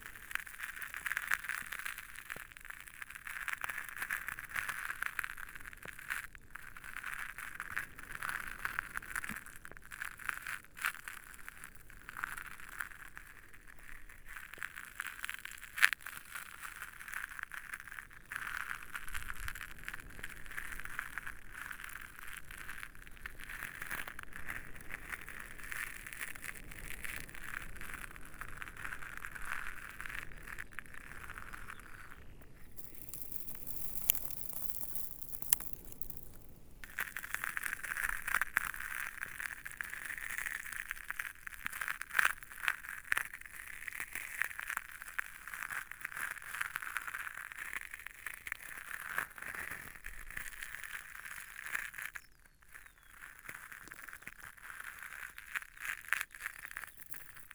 Mas-d'Orcières, France - Granite gravels
The Lozere mounts. This desertic area is made of granite stones. It's completely different from surroundings. Here, I'm playing with the gravels. It screechs a lot and you won't find this kind of sounds in the other Cevennes mountains.
April 2016